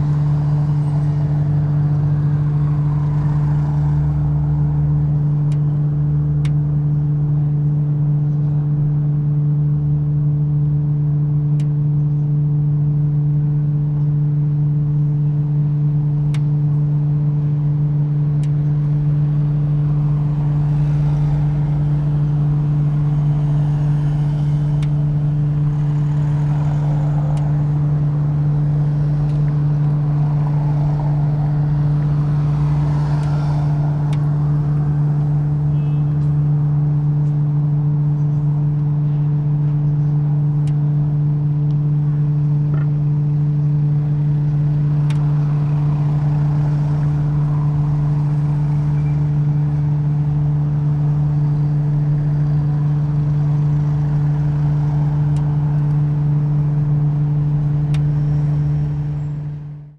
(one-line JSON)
{"title": "Porto, Rua Ferreira Borges - low frequency at Hotel de Boles", "date": "2013-10-02 13:52:00", "description": "air vent located at street level blasting very hot air from the basement (very likely from laundry of the hotel), making constant hum. the grating of the vent expands in the hot air stream, bends and crackles.", "latitude": "41.14", "longitude": "-8.62", "altitude": "42", "timezone": "Europe/Lisbon"}